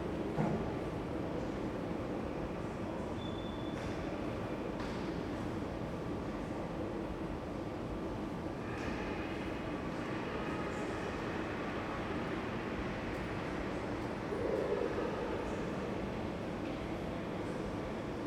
Messe Berlin - elevator area
Messe Berlin during Linux Day, hall 7, elevator area
Berlin, Deutschland